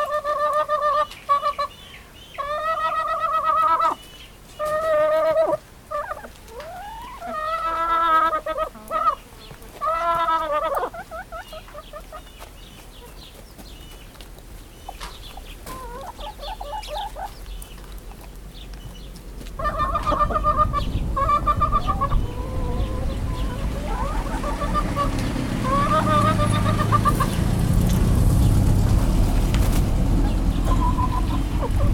Επαρ.Οδ. Τριαντάρου-Φαλατάδου, Τήνος, Ελλάδα - Chicken Pen on outskirts of Falatados
Evening recording of Chickens inside a Chicken Pen at Falatados village made by the soundscape team of E.K.P.A. university for KINONO Tinos Art Gathering.
Tinos, Greece, 24 July